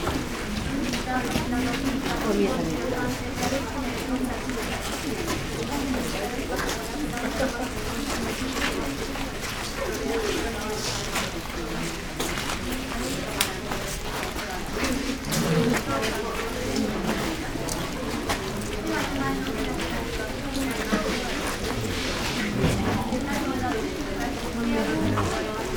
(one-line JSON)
{"title": "dry rock garden, Ginkakuji, Kyoto - after the rain, standing still, flow of steps on sand and wooden foors", "date": "2014-11-02 14:34:00", "latitude": "35.03", "longitude": "135.80", "altitude": "96", "timezone": "Asia/Tokyo"}